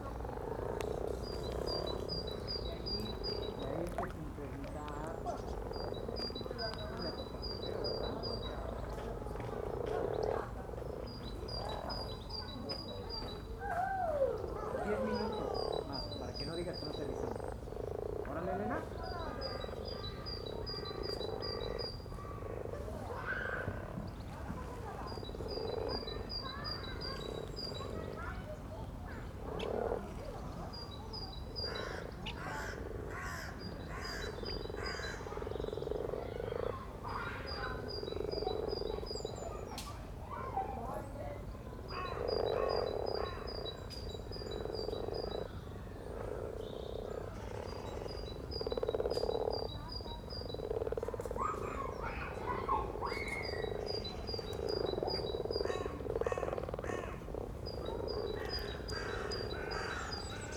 Mapesbury Dell Park - Dell Park pond, mating frogs, kids playing in the playground
Dell pocket Park pond, mating frogs, kids playing in the playground
Greater London, England, United Kingdom, 21 February 2021, 13:06